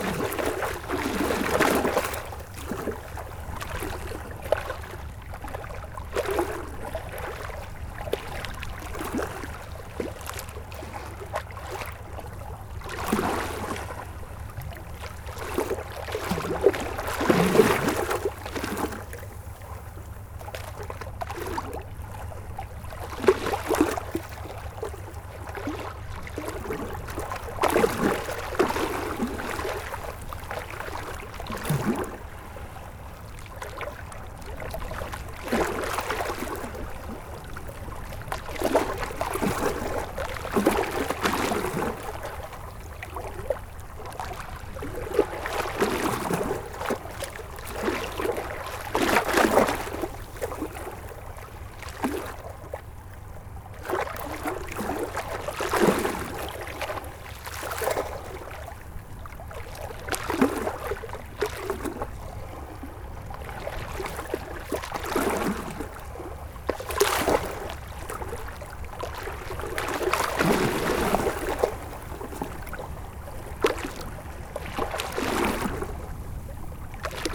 Berville-sur-Mer, France - Seine river
Sound of the waves in front of the Seine river, during the high tide.